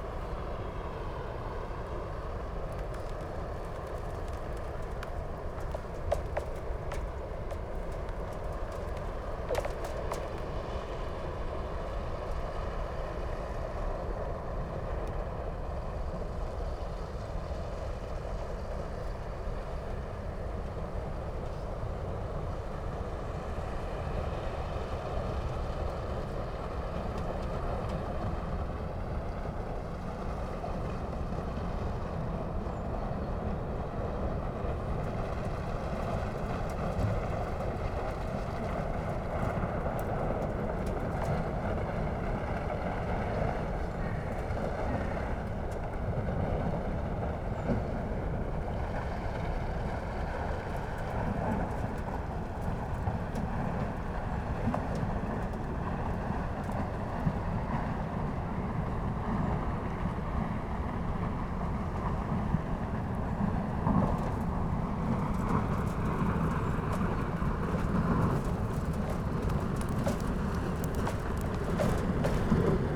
{
  "title": "berlin, plänterwald: spreeufer, steg - coal freighter passing",
  "date": "2014-01-26 16:20:00",
  "description": "a coal freighter is arriving fron teh south-east germn lignite region. the ice on river Spree isn't yet thick enough for requiring an icebreaker, so these transporters open up the waterway by themself.\n(SONY PCM D50, DPA4060)",
  "latitude": "52.47",
  "longitude": "13.49",
  "altitude": "31",
  "timezone": "Europe/Berlin"
}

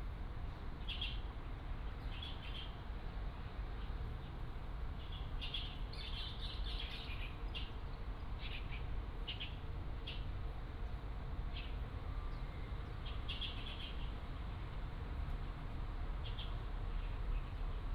{
  "title": "Demei Park, Hemei Township - The sound of birds",
  "date": "2017-02-15 09:30:00",
  "description": "The sound of birds, in the park",
  "latitude": "24.12",
  "longitude": "120.50",
  "altitude": "11",
  "timezone": "GMT+1"
}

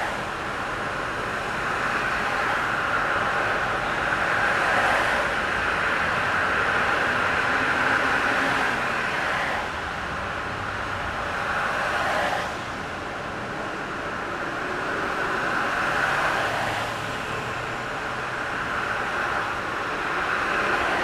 {
  "title": "Facilities Services Complex, Austin, TX, USA - I-35 & Manor Thunderstorm Traffic",
  "date": "2015-06-28 12:32:00",
  "description": "Equipment: Marantz PMD661 and a stereo pair of DPA 4060s",
  "latitude": "30.28",
  "longitude": "-97.73",
  "altitude": "188",
  "timezone": "America/Chicago"
}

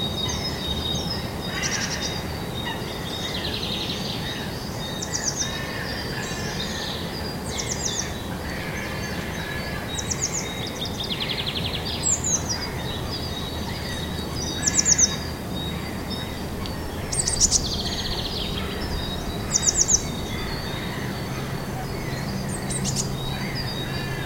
{
  "title": "Erlangen, Deutschland - birds at moenau forest",
  "date": "2013-03-09 10:42:00",
  "description": "Moenau forest, birds, Olympus LS-5",
  "latitude": "49.60",
  "longitude": "10.96",
  "altitude": "295",
  "timezone": "Europe/Berlin"
}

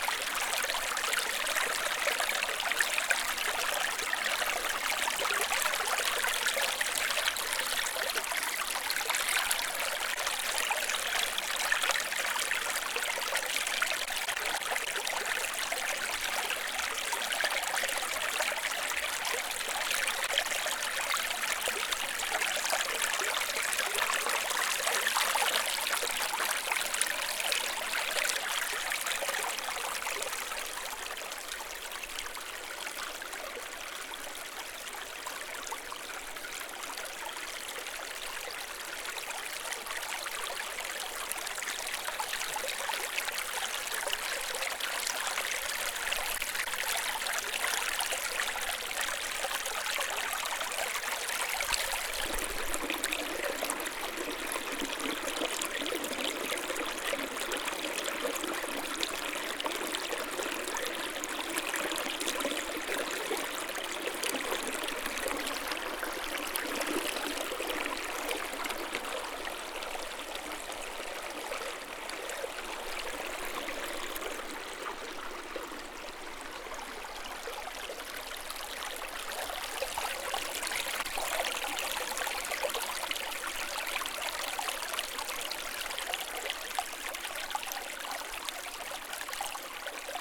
{
  "title": "lousã, Portugal, Burgo small river",
  "date": "2011-06-21 13:18:00",
  "description": "small stream, water, birds, water rumble",
  "latitude": "40.10",
  "longitude": "-8.23",
  "altitude": "303",
  "timezone": "Europe/Lisbon"
}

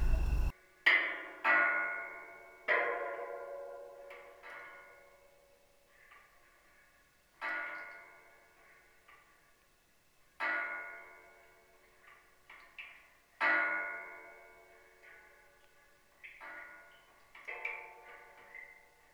{
  "date": "2021-10-04 23:30:00",
  "description": "Grill covered drain...light autumn rain...",
  "latitude": "37.85",
  "longitude": "127.75",
  "altitude": "125",
  "timezone": "Asia/Seoul"
}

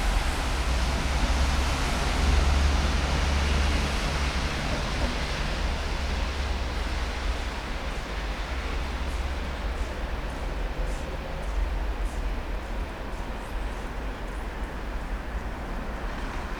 {
  "title": "Lithuania, Vilnius, city park",
  "date": "2011-01-10 14:20:00",
  "description": "snowy city park",
  "latitude": "54.69",
  "longitude": "25.29",
  "timezone": "Europe/Berlin"
}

